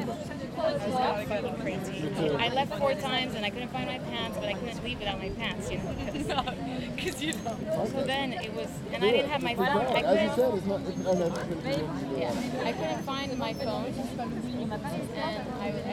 Square du Vert-Galant, Place du Pont Neuf, Paris, Frankrijk - Conversations in the park
General atmosphere and conversations in a very crowded Square Du Vert - Galant, a tiny park on the very edge of Île de la Cité in Parijs.